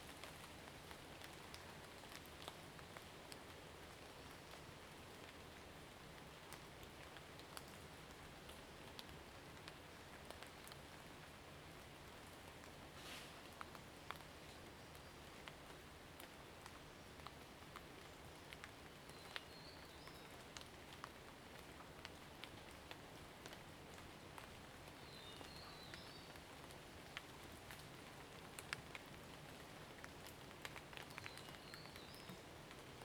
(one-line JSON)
{"title": "水上巷, 埔里鎮桃米里, Nantou County - raindrop", "date": "2016-03-24 09:23:00", "description": "In the woods, raindrop\nZoom H2n MS+XY", "latitude": "23.94", "longitude": "120.92", "altitude": "597", "timezone": "Asia/Taipei"}